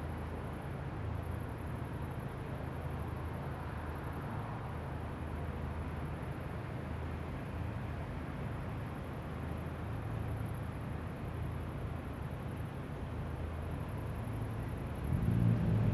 Berlin, Leibzigerstrasse, Deutschland - Stadtambi, Mitternacht
Auf dem Balkon. 23. Stockwerk.